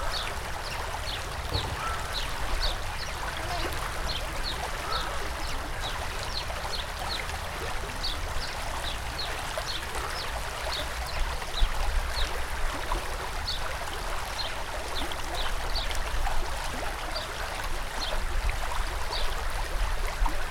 Strzelecka, Gorzów Wielkopolski, Polska - Park of roses.
Kłodawka river in the so-called park of roses.